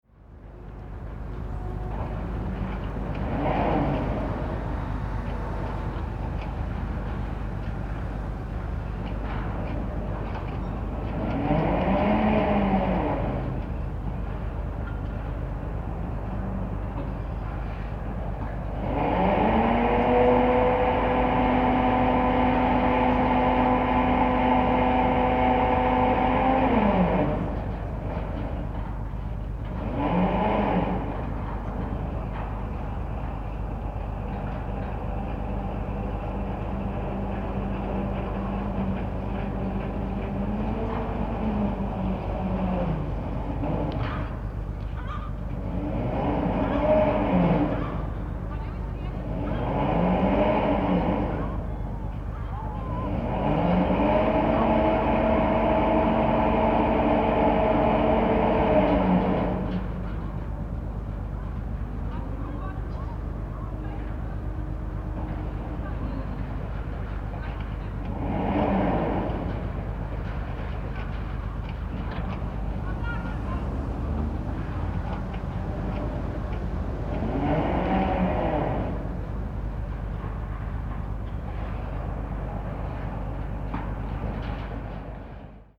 Rijeka, Croatia - The Art Of Noise
2013 - The infinite variety of noises is infinite
31 December 2013, ~16:00